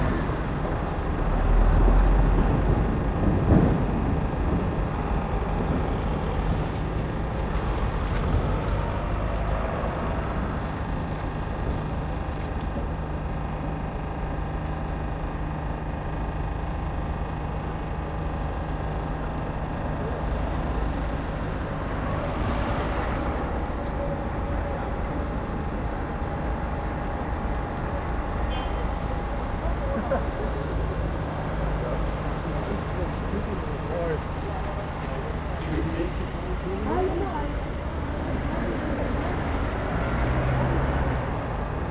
Berlin: Vermessungspunkt Maybachufer / Bürknerstraße - Klangvermessung Kreuzkölln ::: 27.11.2013 ::: 13:33